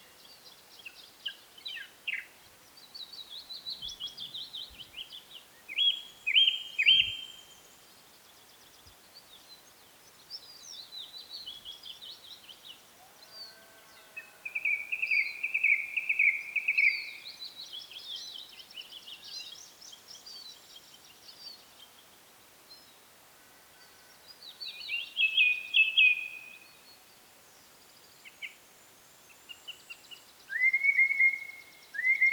Blair witch, Moffat, UK - Horror or zen
Is Blair witching around here?
Scotland, United Kingdom